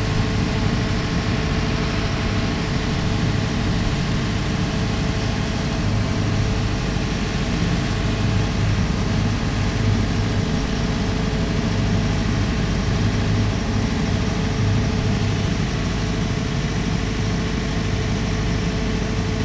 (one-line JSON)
{"title": "kaub: rheinfähre - the city, the country & me: rhine ferry", "date": "2010-10-17 17:10:00", "description": "my ferry captain did the job in 2 minutes 44 seconds ;) greetz to adi w\nthe city, the country & me: october 17, 2010", "latitude": "50.09", "longitude": "7.76", "altitude": "75", "timezone": "Europe/Berlin"}